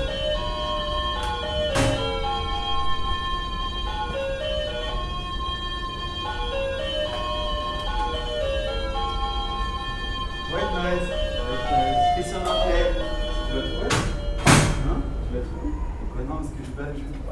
ACO, 14th Floor, 365Hennessy Road, Wanchai, Hong-Kong
香港島 Hong Kong